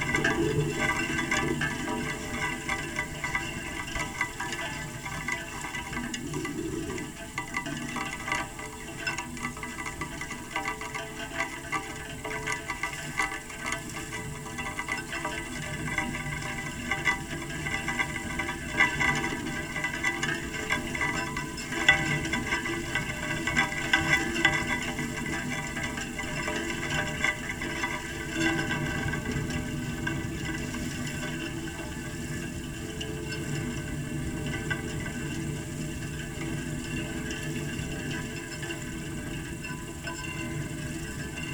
Lithuania, Tauragnai, metallic hedge
recorded with contact microphone. some metallic hedge protecting a young oak tree on the shore
31 August 2012